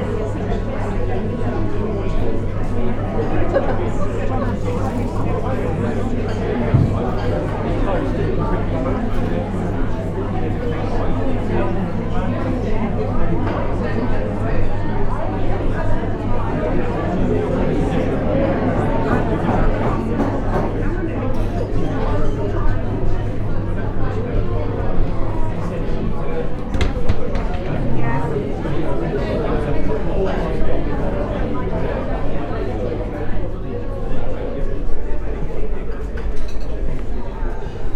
The shifting ambient sounds recorded while walking around inside a large store. Music, voices, busy cafe.
MixPre 6 II with 2 Sennheiser MKH 8020s in a rucksack.